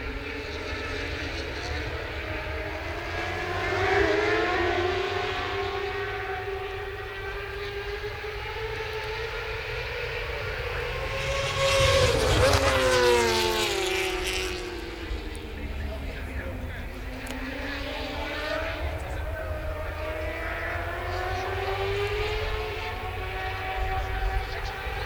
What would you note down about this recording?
moto2 race 2013 ... lavalier mics ...